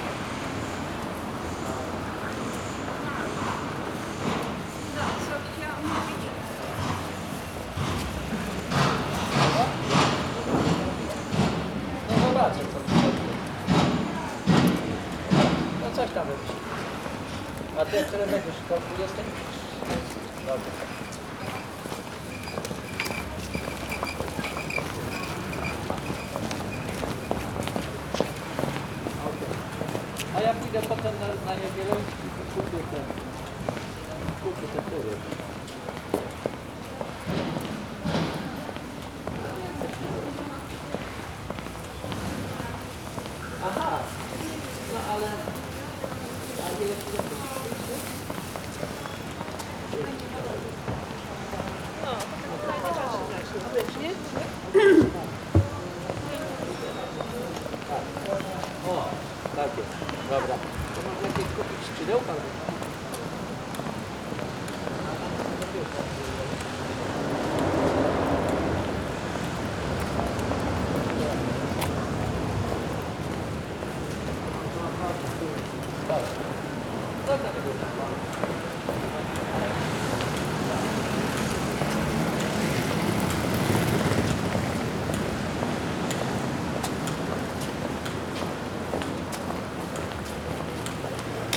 {
  "title": "Poznan, Polwiejska Street boardwalk - broadwalk around noon",
  "date": "2014-03-28 10:55:00",
  "description": "walking down the most popular boardwalk in Poznan. people walking in all directions, talking on their phones, some construction.",
  "latitude": "52.40",
  "longitude": "16.93",
  "altitude": "65",
  "timezone": "Europe/Warsaw"
}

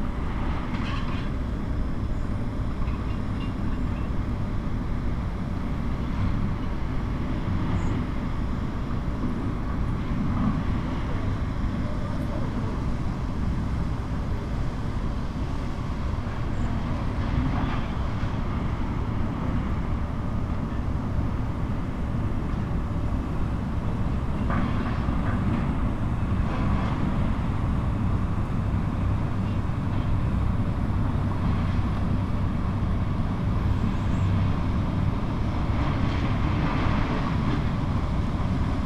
{
  "title": "Herne, Deutschland - am rhein-herne-kanal",
  "date": "2014-07-18 15:15:00",
  "description": "am Rhein-herbe-kanal",
  "latitude": "51.55",
  "longitude": "7.17",
  "altitude": "44",
  "timezone": "Europe/Berlin"
}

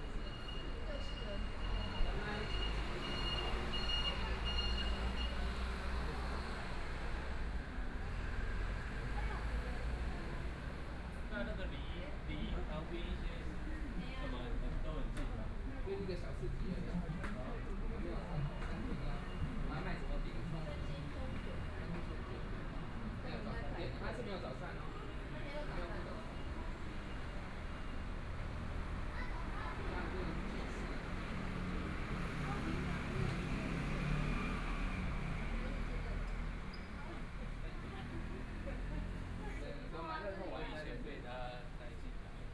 {
  "title": "Nong'an St., Taipei City - In the Street",
  "date": "2014-02-06 18:23:00",
  "description": "walking In the Street, Environmental sounds, Motorcycle sound, Traffic Sound, Binaural recordings, Zoom H4n+ Soundman OKM II",
  "latitude": "25.06",
  "longitude": "121.53",
  "timezone": "Asia/Taipei"
}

